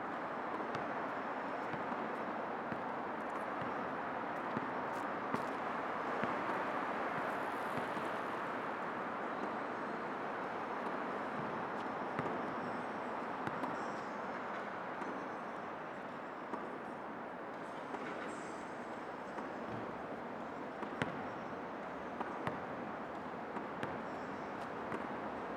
대한민국 서울특별시 서초구 우면동 - Yangjaecheon Basketball Court
A person practising basketball alone at the Basketball court, nearby Yangjaechon.
Stream flowing, basketball bouncing, nice reflection.
양재천 주변 농구장에서 농구공을 연습하는 사람의 소리.